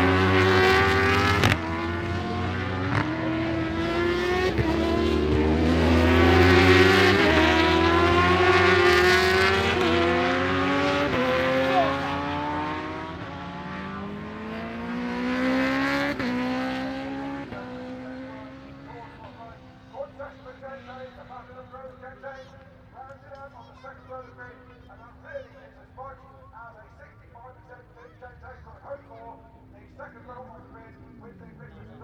Unit 3 Within Snetterton Circuit, W Harling Rd, Norwich, United Kingdom - British Superbikes 2006 ... superbikes qualifying ...
british superbikes 2006 ... superbikes qualifying ... one point stereo mic to mini disk ...
17 June